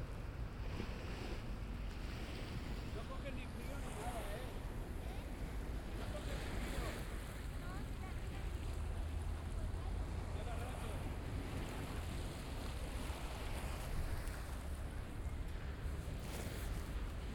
Barcelona: Beach in november
beach, november, waves, barcelona, people, talking